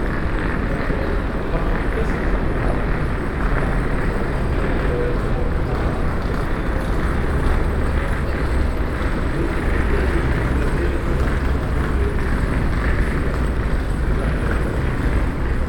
Brussels, Berlaymont building, smoking area
PCM-M10, SP-TFB-2, binaural.
City of Brussels, Belgium